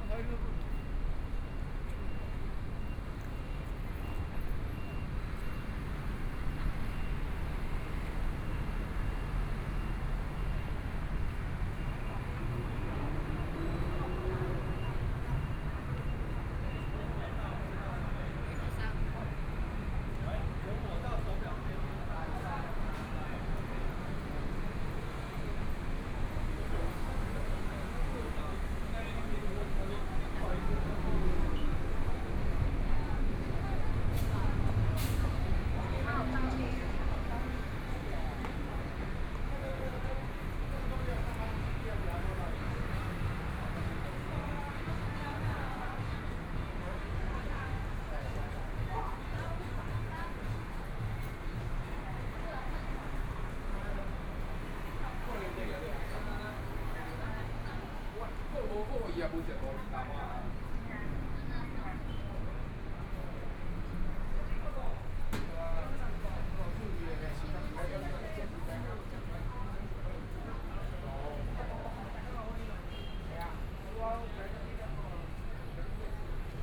Nanjing W. Rd., Taipei - walking on the Road
walking on the Road, Traffic Sound, Through a variety of different shops, Binaural recordings, Zoom H4n+ Soundman OKM II
Taipei City, Taiwan, 6 February 2014, ~14:00